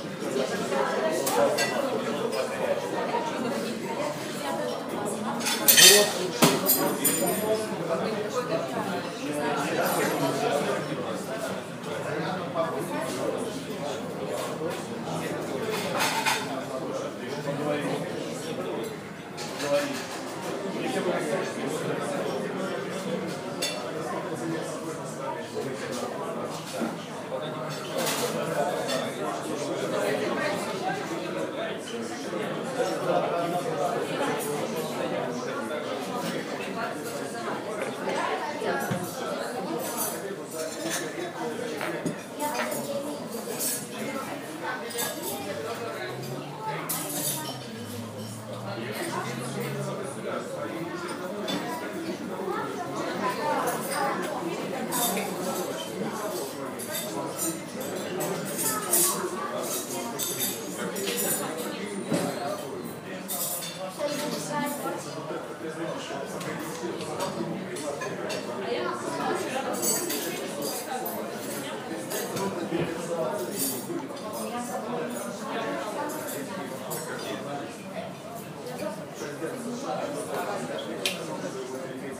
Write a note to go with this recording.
It's sound of cafe where I had lunch.